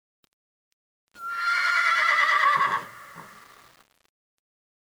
rambouillet, cheval hennissant
hennissement de cheval dans la foret de rambouillet